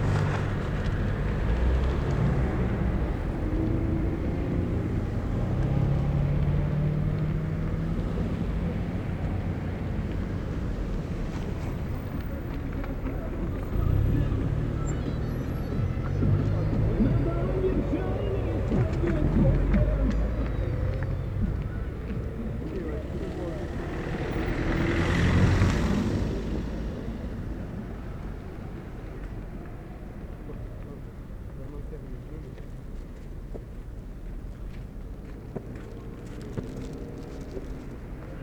Berlin: Vermessungspunkt Maybachufer / Bürknerstraße - Klangvermessung Kreuzkölln ::: 29.12.2010 ::: 16:29